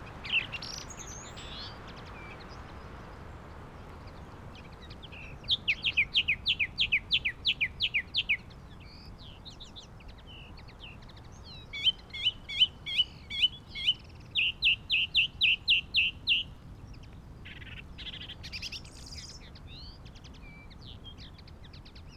song thrush song ... pre-amped mini jack mics in a SASS to Olympus LS 11 ... bird calls ... song ... from ... yellowhammer ... chaffinch ... crow ... skylark ... linnet ... dunnock ... wren ... rain and wind ...
Yorkshire and the Humber, England, UK, May 2021